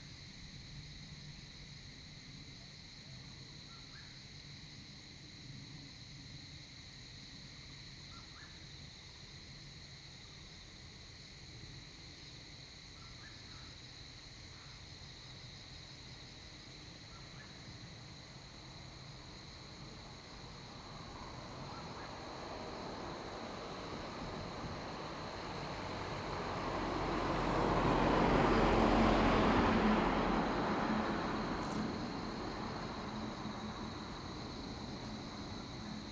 200縣道30K, Manzhou Township - Mountain path
Various bird calls, wind sound, Insect noise, Cicadas cry, traffic sound, Next to the road in the mountains
Zoom H2n MS+XY
2 April, 14:40